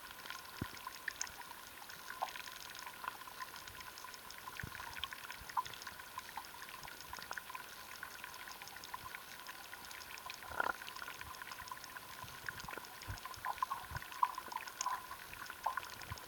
Baltakarčiai, Lithuania, pond underwater
Piloting drone found some pond in the meadow. Went to it with hydrophones.